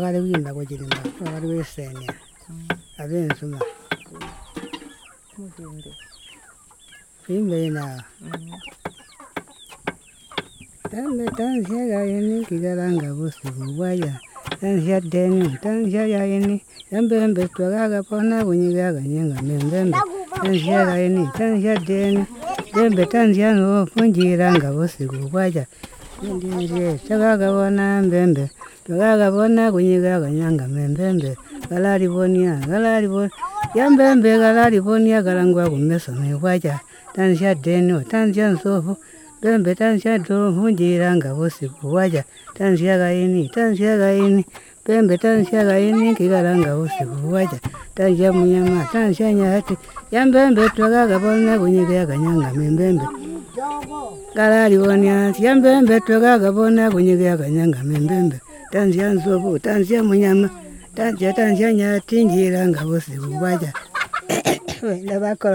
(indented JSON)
{
  "title": "Manjolo, Binga, Zimbabwe - Ester's song...",
  "date": "2016-10-26 11:30:00",
  "description": "Margaret askes her mother for another song... Ester responds...",
  "latitude": "-17.76",
  "longitude": "27.39",
  "altitude": "602",
  "timezone": "Africa/Harare"
}